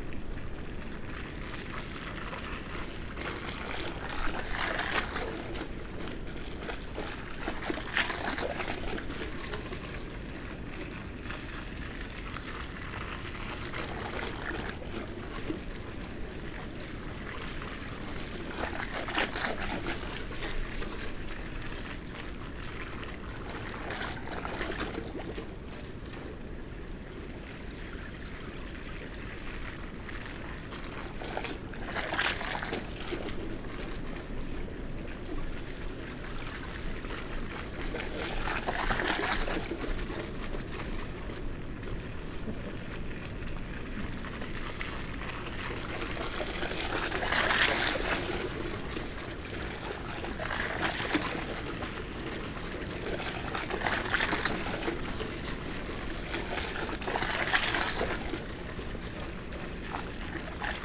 Amble Pier, Morpeth, UK - pattering waves ... up ..? and back ..?
Amble Pier ... pattering waves ... a structure under the pier separates a lagoon from the main stream of water ... incoming waves produce this skipping effect by lapping the metal stancheons ... two fishing boats disrupt the pattern ... then it returns ... recorded using a parabolic reflector ... just fascinated by this ...